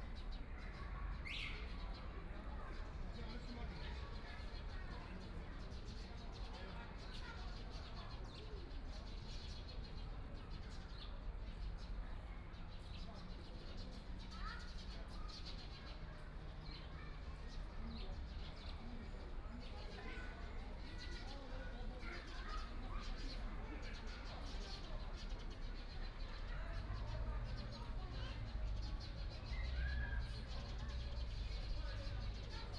Sitting in the park near the entrance plaza, Binaural recording, Zoom H6+ Soundman OKM II